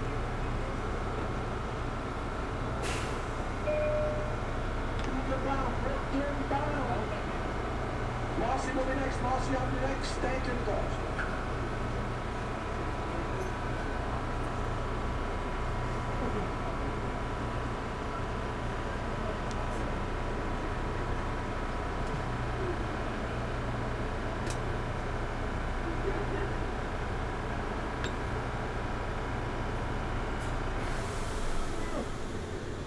Late-night commuters waiting for the J train to start moving again after line disruptions.
Recorded at Delancey Street/Essex Street station.